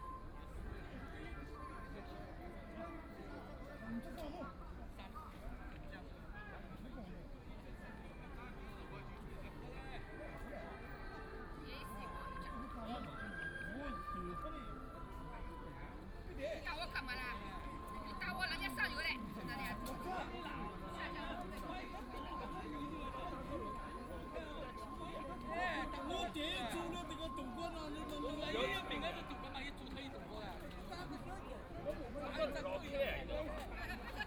Penglai Park, Shanghai - playing cards
Very cold weather, Many people gathered in the square park, Group of a group of people gathered together playing cards, Binaural recording, Zoom H6+ Soundman OKM II